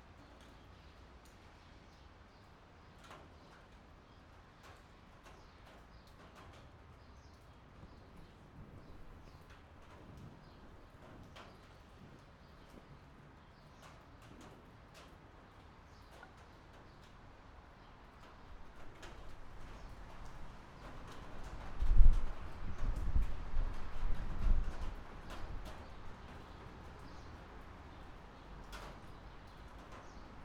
Auckland, New Zealand - Rail bridge, tin roof in rain
Under an open rail bridge there are tin roofs that protect cars from the trains above.
Sony PCM-D50, on board mics.